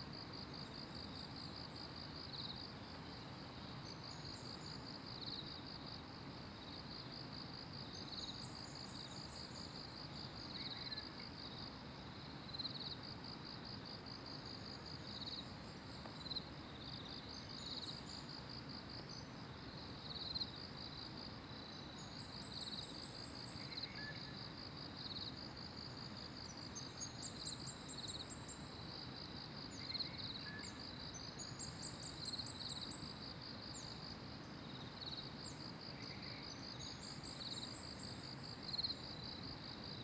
水牆, 桃米里Puli Township - Sound of insects
Sound of insects, Bird sounds, Traffic Sound
Zoom H2n MS+XY
Nantou County, Puli Township, 水上巷, 21 April 2016